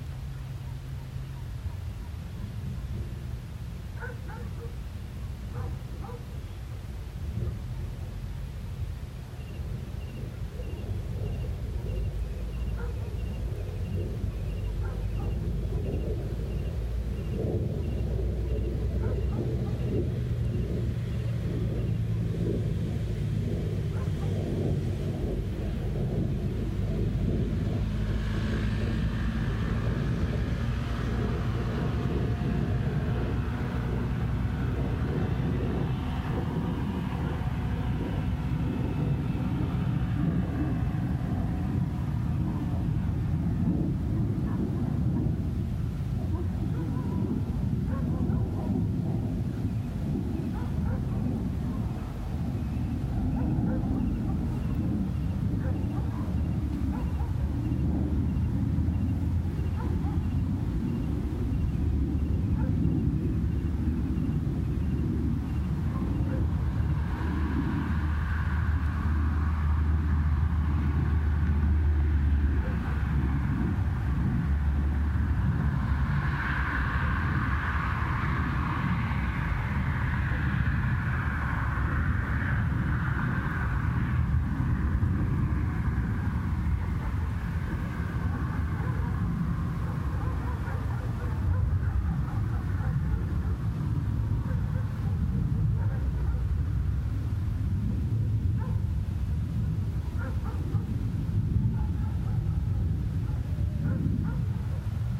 Chiquinquirá, Boyacá, Colombia - Medium environment
Rural land located in the department of Cundinamarca in cold climate, sucre occidental village, national road Chiquinquirá Bogotá.
Road and airway with heavy traffic due to its proximity to the capital of Colombia. Bordering territory between Boyacá and Cundinamarca, 1 kilometer from the national road, surrounded by cattle farms with domestic animals such as dogs, which are the guardians in rural areas.